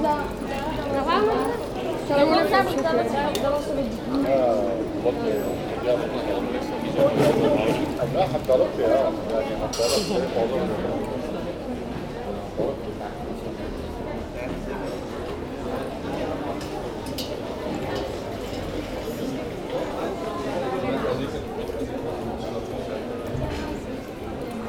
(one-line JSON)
{"title": "Leuven, Belgique - People enjoying the sun", "date": "2018-10-13 15:10:00", "description": "Into the main commercial artery, people enjoy the sun and discuss quietly.", "latitude": "50.88", "longitude": "4.70", "altitude": "20", "timezone": "GMT+1"}